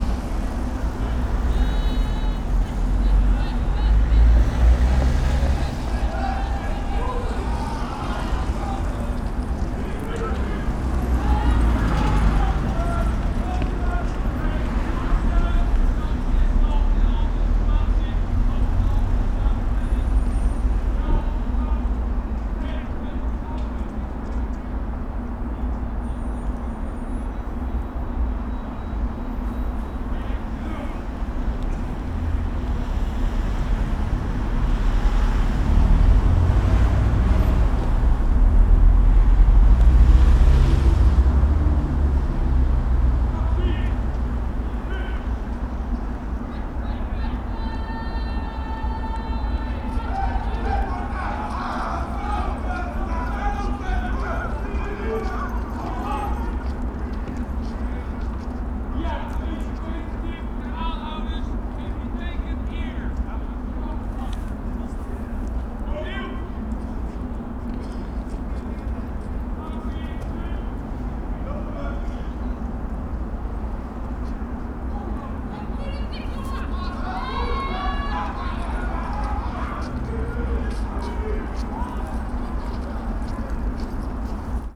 Binckhorst, Laak, The Netherlands - Policemen training at car park
Binckhorst.
Students of the police academy of the Hague which is located at Wegastraat 44 practice how to arrest suspects on a car park around the corner of their academy.
Recorded with two DPA 4060's and a Zoom H4 recorder.
Pegasusstraat, Laak, The Netherlands